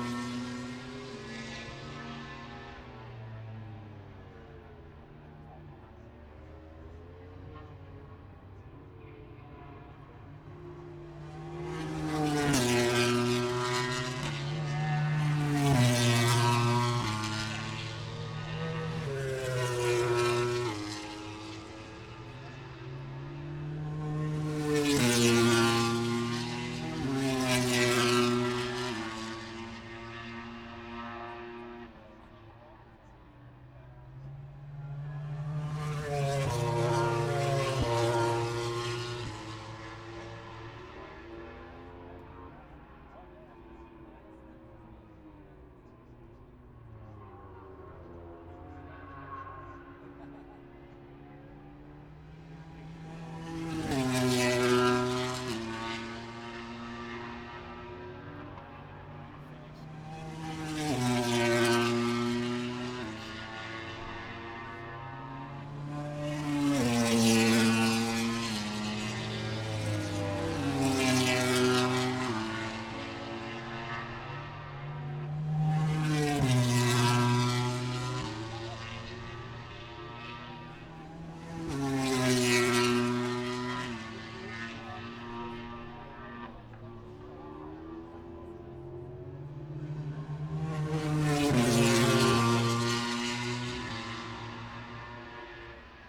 Towcester, UK - british motorcycle grand prix 2022 ... moto grand prix ...
british motorcycle grand prix 2022 ... moto grand prix first practice ... dpa 4060s on t bar on tripod to zoom f6 ...
England, United Kingdom